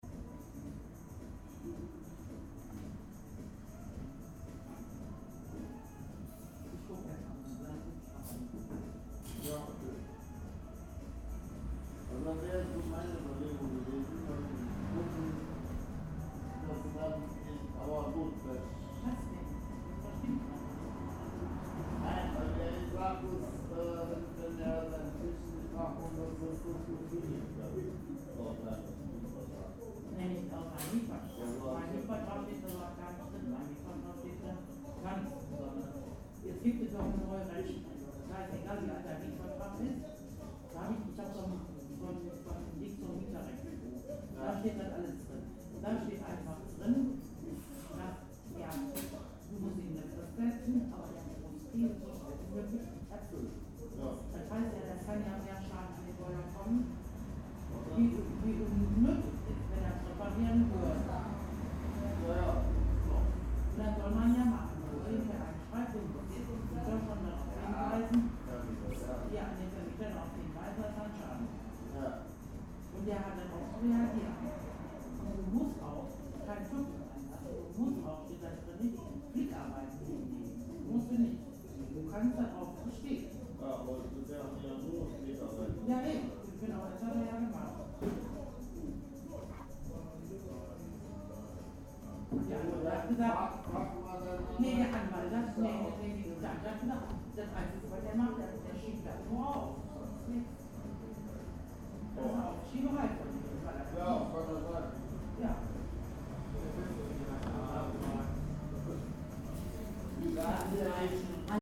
Nordstadt, Wuppertal, Deutschland - alt-wuppertal
gaststätte alt-wuppertal, höchsten 2, 42105 wuppertal